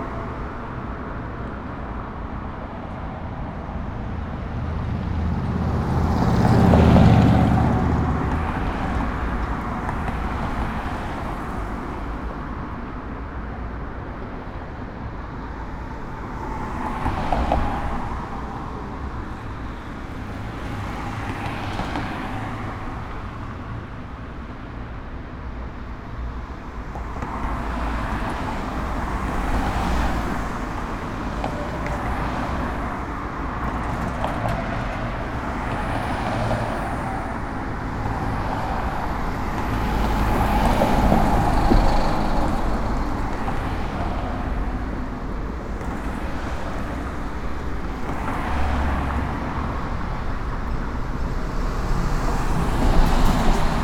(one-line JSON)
{"title": "Blvd. Mariano Escobedo Ote., León Moderno, León, Gto., Mexico - Tráfico en el bulevar Mariano Escobedo.", "date": "2021-12-13 17:25:00", "description": "Traffic on Mariano Escobedo Boulevard.\nI made this recording on December 13th, 2021, at 5:25 p.m.\nI used a Tascam DR-05X with its built-in microphones and a Tascam WS-11 windshield.\nOriginal Recording:\nType: Stereo\nEsta grabación la hice el 13 de diciembre 2021 a las 17:25 horas.", "latitude": "21.11", "longitude": "-101.67", "altitude": "1794", "timezone": "America/Mexico_City"}